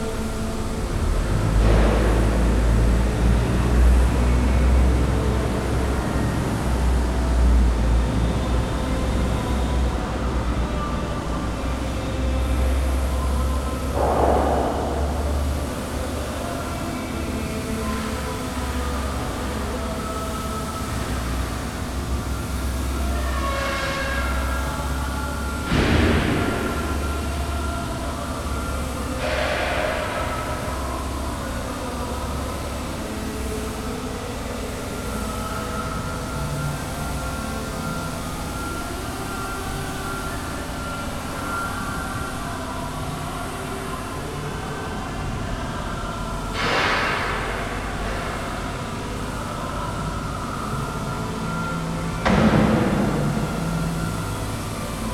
SBG, Puigneró, antigua fábrica - Almacén Fundició Benito
Ambiente de trabajo en uno de los espacios de la antigua fábrica Puigneró que han sido reocupados por la Fundició Benito. Es Agosto y apenas hay una veintena de los alrededor de sesenta trabajadores habituales.